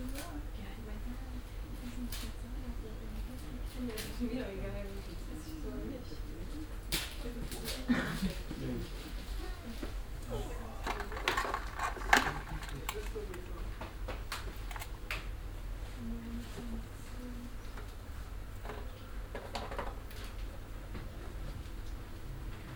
cologne, herzogstrasse, sex shop
kunden im sex shop, nachmittags, gedämpfte aber amüsierte unterhaltungen, im hintergrund o-töne aus einem film (non sex)
soundmap nrw:
social ambiences/ listen to the people - in & outdoor nearfield recordings
3 August 2008